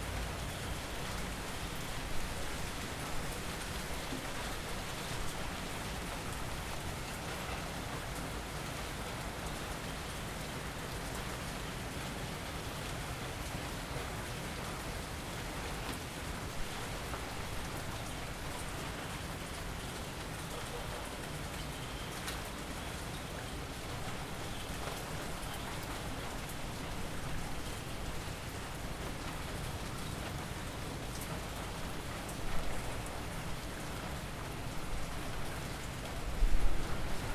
São João, Portugal - Rainstorm Lisbon
Rainstorm in Lisbon.
Sounds of Rain, thunders and airplanes.
ZoomH4n
13 November 2014